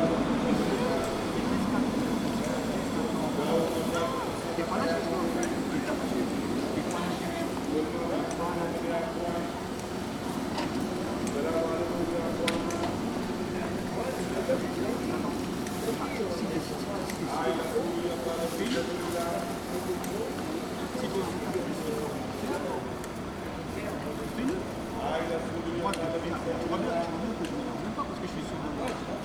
Rue Auguste Blanqui, Saint-Denis, France - Place de la Halle C&A
This recording is one of a series of recording mapping the changing soundscape of Saint-Denis (Recorded with the internal microphones of a Tascam DR-40).